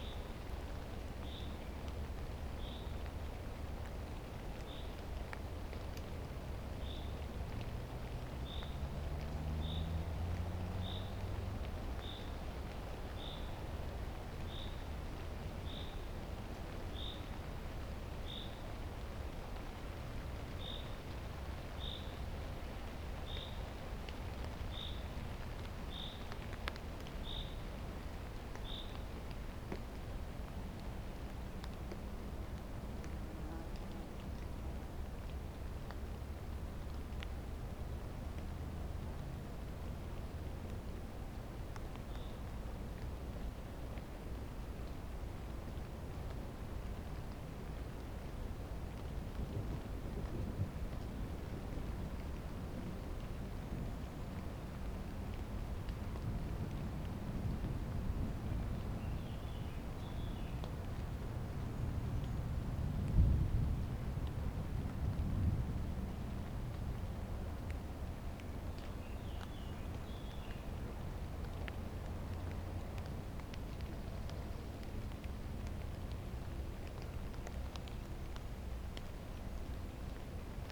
Lithuania, Utena, raining stops
binaural mics burried in the grass